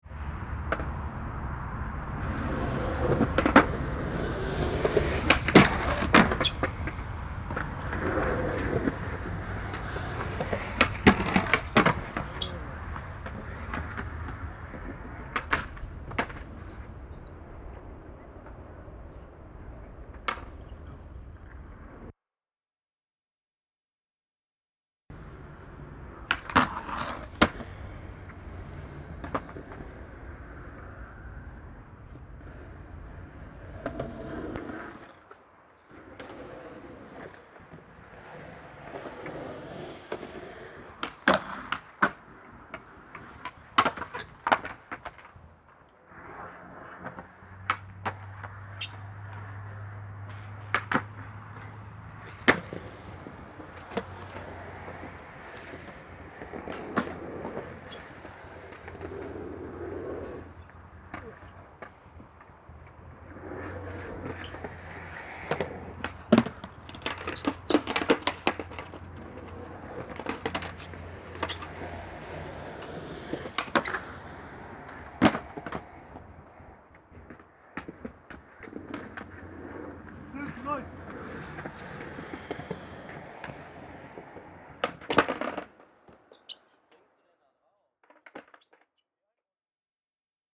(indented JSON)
{
  "title": "Krupp-Park, Berthold-Beitz-Boulevard, Essen, Deutschland - essen, thyssen-krupp park, scater playground",
  "date": "2014-04-19 15:50:00",
  "description": "Im neu eingerichteten Thyssen-Krupp Park an einem Platz für Scater. Der Klang von zwei Jugendlichen die Sprünge üben. Im Hintergrund Fahrzeuggeräusche.\nInside the new constructed Thyssen-Krupp park at a playground for scater. The sound of two boys rehearsing jumps. In the distance motor traffic.\nProjekt - Stadtklang//: Hörorte - topographic field recordings and social ambiences",
  "latitude": "51.46",
  "longitude": "6.99",
  "altitude": "54",
  "timezone": "Europe/Berlin"
}